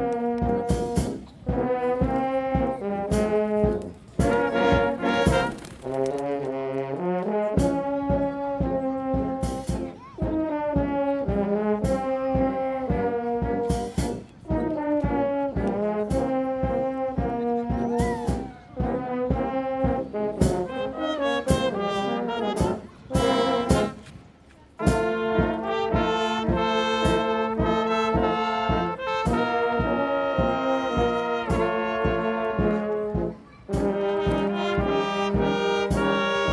Звуки сквера и игра духового оркестра
9 June 2019, 15:13